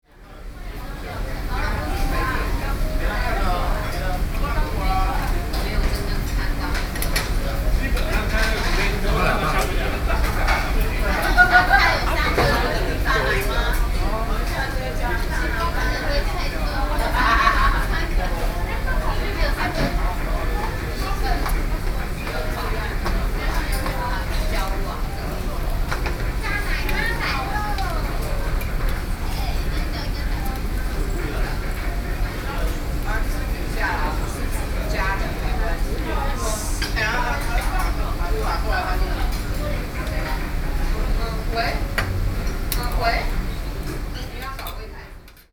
Sec., Tingzhou Rd., Zhongzheng Dist., Taipei City - Hot pot shop
Dining in the hot pot shop, Binaural recordings